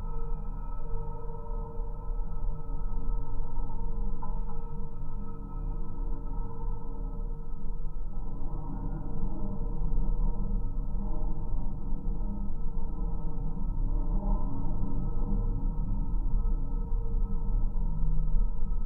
Geophone attached to trolleybus pole